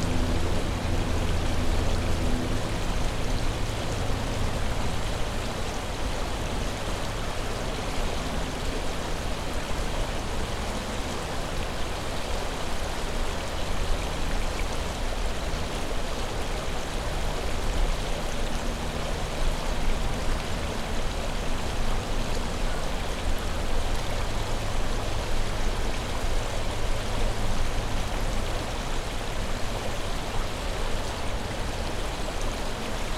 Powers Island Hiking Trail, Sandy Springs, GA, USA - On the side of the trail
A recording made at the side of Powers Island Trail facing the river. The sound of water is very prominent. Other sounds are present, such as other hikers behind the recorder and some geese.
[Tascam DR-100mkiii & Clippy EM-272s]
Georgia, United States, January 2021